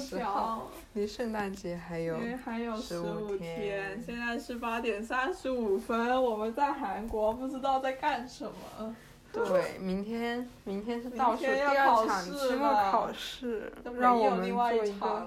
recorded from the 4th floor
some street sounds from outside
chatting
대한민국, 10 December 2020